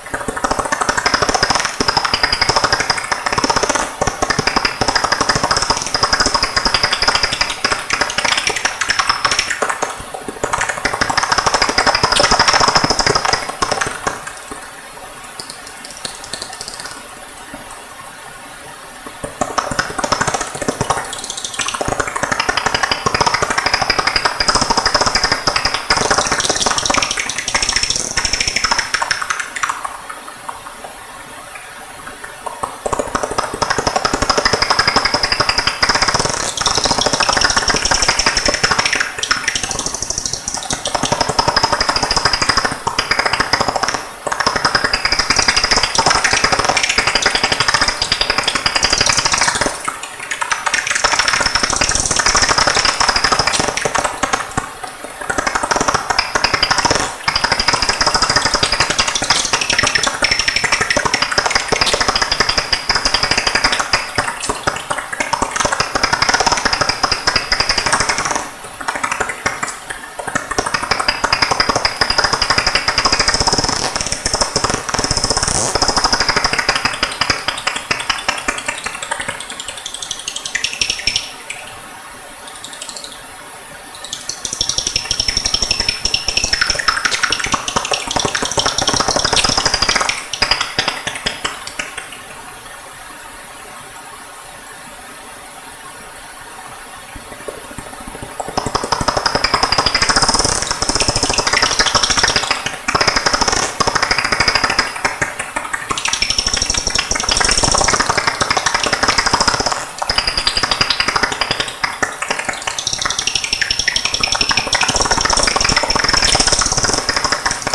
Castlecomer Discovery Centre, Kilkenny, Ireland
Bats feasting on river midgets, Soprano Pipstrelles, Daubentons Bats etc...